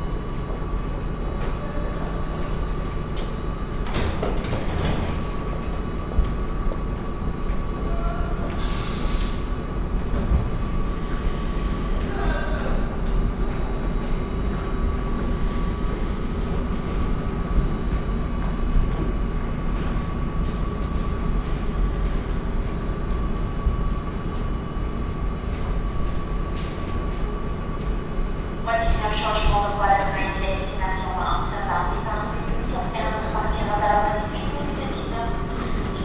Brussels, Midi Station, Platform 17.
A family is running to catch the train.
Saint-Gilles, Belgium, 24 October 2008, 07:44